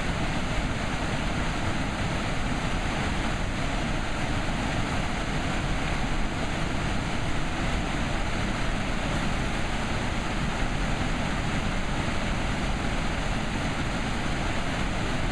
日本, 北海道斜里郡清里町 - さくらの滝２
Sakura no Taki. Water is going down constantly.
Here is the place to see the scenery.Are prohibited, such as fishing.
In addition, in the vicinity may also bear-infested.